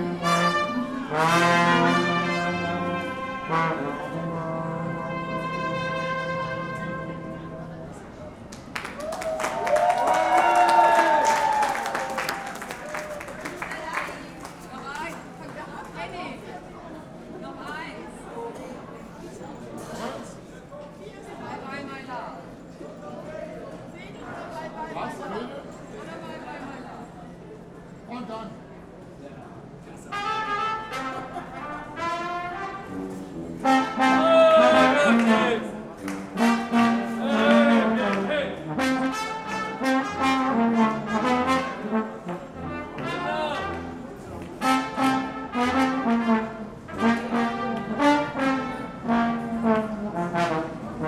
{"title": "Köln Hbf, U-Bahn - spontaneous carnival combo", "date": "2012-02-20 21:30:00", "description": "arriving in Köln at carnival Monday (Rosenmontag) is madness, if you're not prepared or in a bad mood. however, nice things can happen: a musician with a trombone, waiting for his underground train, sees other musicians at the opposite platform and tentatively starts to play (not on the recording, too late...). the others respond, and so a spontaneous mini concert takes place. people enjoy it, until the arriving trains dissolve everything.\n(tech note: olympus ls5)", "latitude": "50.94", "longitude": "6.96", "altitude": "54", "timezone": "Europe/Berlin"}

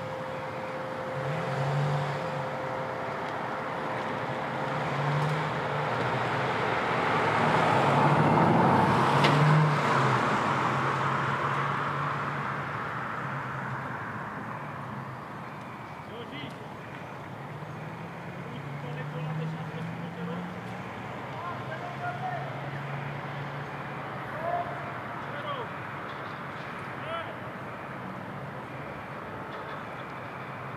{"title": "Strada Ștefan Baciu, Brașov, Romania - Winter construction works - Crane", "date": "2021-01-06 13:12:00", "description": "As it was a very mild (even worryingly warm) winter, construction works on new apartment blocks restarted already. Here you can hear a crane being loaded. Not a very crowded soundscape, some cars passing by. Recorded with Zoom H2n, surround mode.", "latitude": "45.67", "longitude": "25.61", "altitude": "546", "timezone": "Europe/Bucharest"}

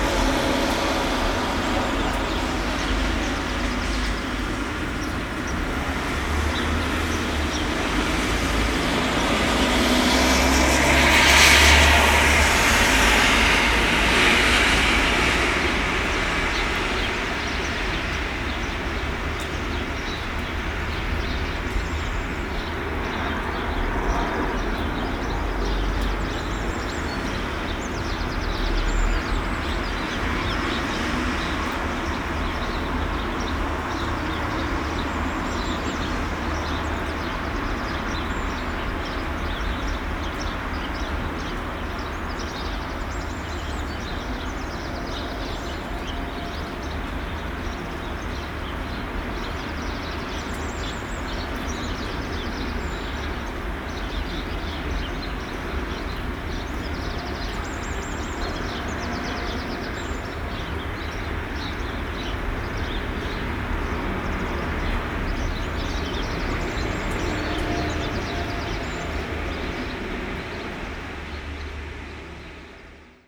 {"date": "2020-04-06 06:30:00", "description": "On this particular occasion, recording of the Dawn Chorus was further complicated (or maybe enhanced) by the rain.", "latitude": "42.34", "longitude": "-3.69", "altitude": "868", "timezone": "Europe/Madrid"}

